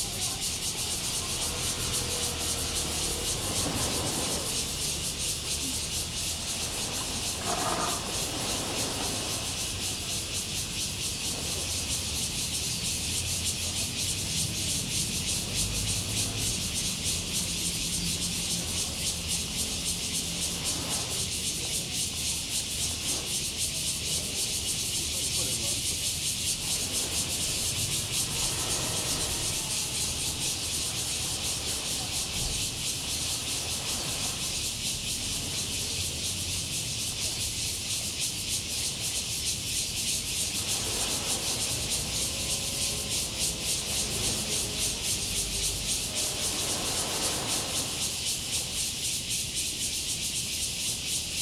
榕堤, Tamsui District 新北市 - Sound tide and Cicadas cry
Before typhoon, Sound tide, Cicadas cry
Zoom H2n MS+XY